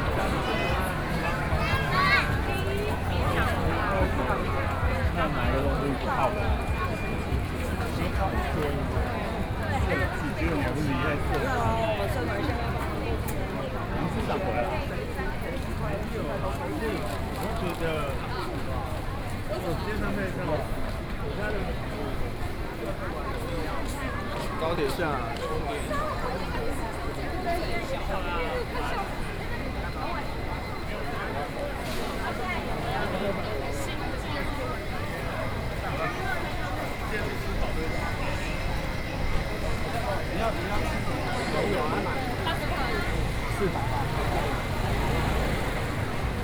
Yonghe, New Taipei City - Night Market
Night Market, Sony PCM D50 + Soundman OKM II
Yonghe District, New Taipei City, Taiwan, 30 August 2013, 21:02